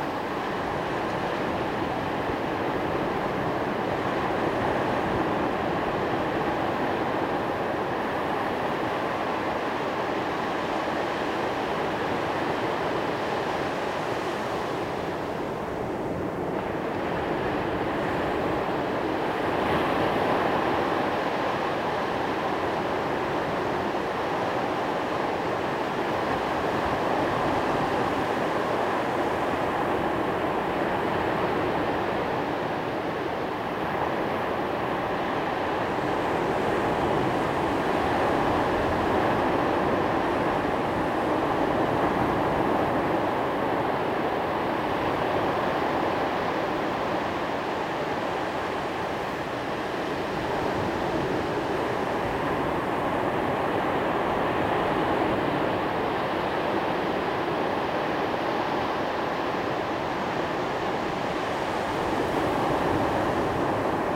Just the very soft white-noise sound of waves on the beach on our holiday last December. Found myself wondering where this recording was, and remembering the peace of just sitting by the sea and listening to its sighs. It was an amazing sunset at 5pm and we waited and watched while the last of the light sank away behind the waves. It was a holiday, so no fancy heavy equipment - just my trusty EDIROL R-09, still going strong.
Las Palmas, Canarias, España, 11 December 2021